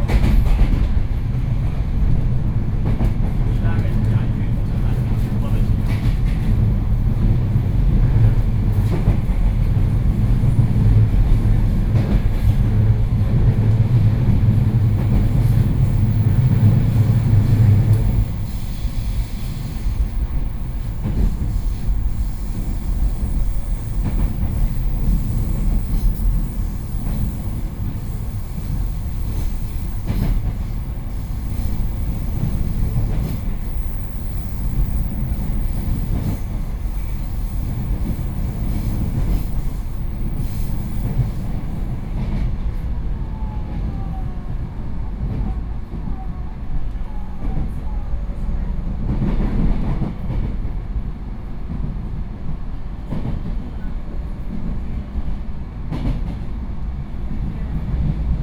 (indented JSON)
{
  "title": "Zhubei, Hsinchu - On the train",
  "date": "2013-02-08 18:46:00",
  "latitude": "24.85",
  "longitude": "121.01",
  "altitude": "26",
  "timezone": "Asia/Taipei"
}